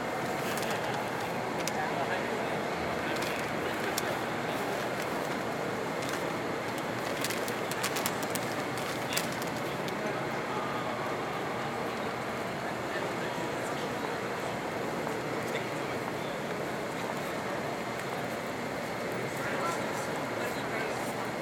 E 42nd St, New York, NY, USA - Grand Central Terminal at night
Grand Central Terminal, Main Concourse, at night.
United States, 5 April 2022